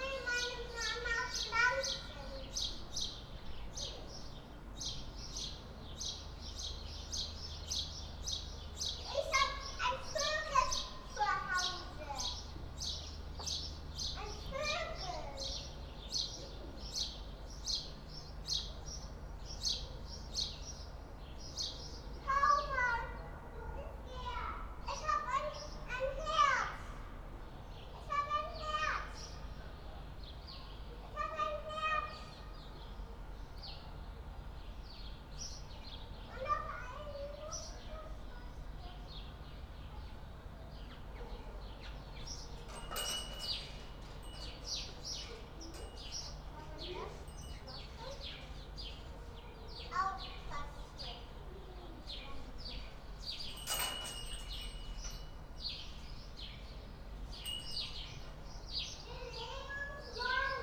Berlin Bürknerstr., backyard window - kids communicating across the backyard
sunny Sunday late morning, conversation of two kids, across the backyard, from one house to the other.
(Sony PCM D50)
Berlin, Germany